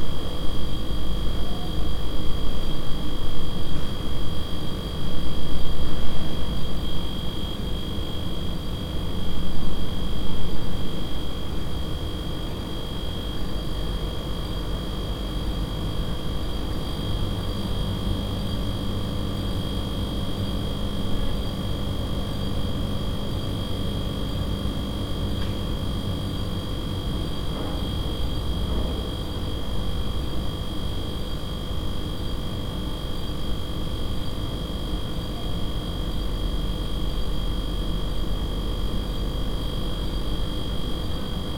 Kaunas, Lithuania, botanical garden greenhouse
Inside the Kaunas Botanical Garden's greenhouse. Some air/water pump working.